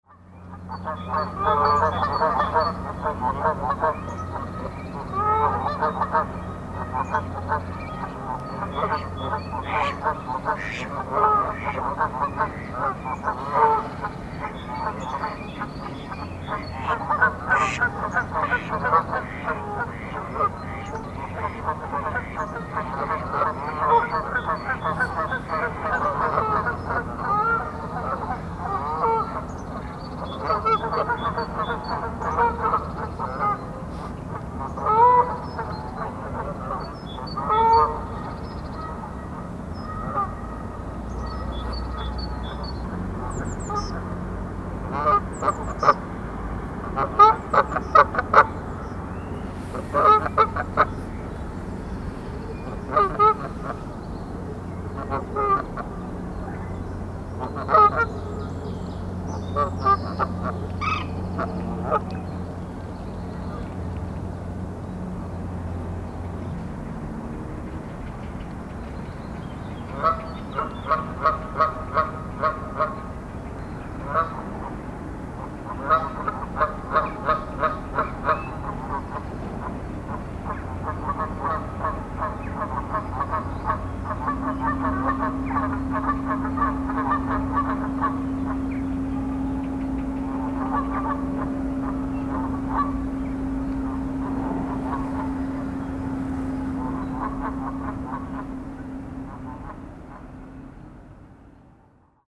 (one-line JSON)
{"title": "Namur, Belgique - Canadian geese", "date": "2016-04-21 12:30:00", "description": "Canadian geese are talking on the island near the bank.", "latitude": "50.44", "longitude": "4.86", "altitude": "83", "timezone": "Europe/Brussels"}